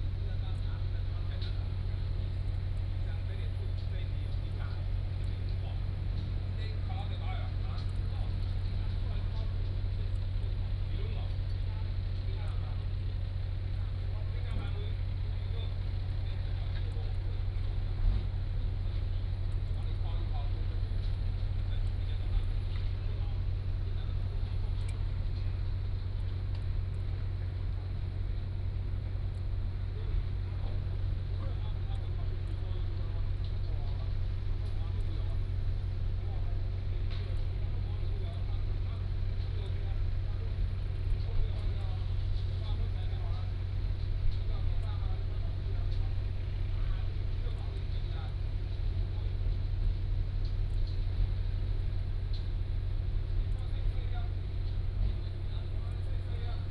Road Construction, Dogs barking